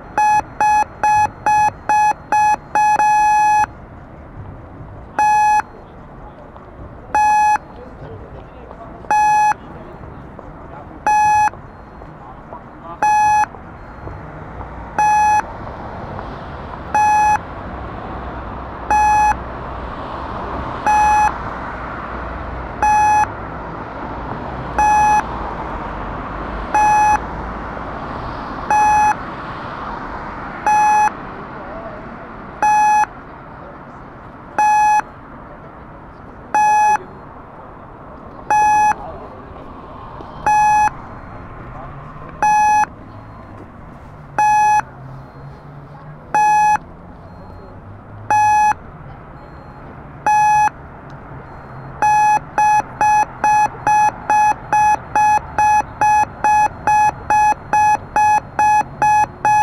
Near a big road, a red light indicates to pedestrians they can cross. It's a typical sound of Copenhagen.
København, Denmark, 14 April 2019, 19:00